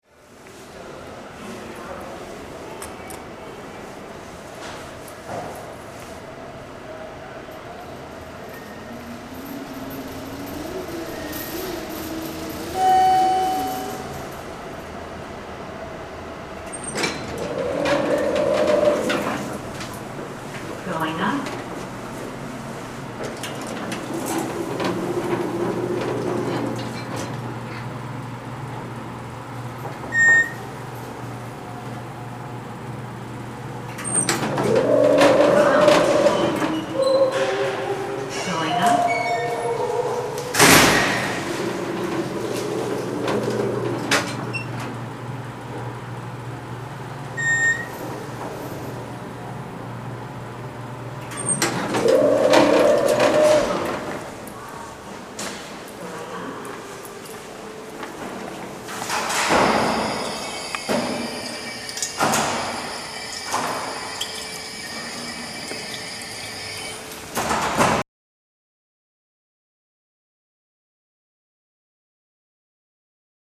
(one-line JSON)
{"title": "Library of Congress, Jefferson Bldg", "date": "2010-11-04 16:34:00", "description": "Taking the elevator from the basement tunnel to the main reading room in the Library of Congress - Jefferson Building.", "latitude": "38.89", "longitude": "-77.00", "altitude": "27", "timezone": "America/New_York"}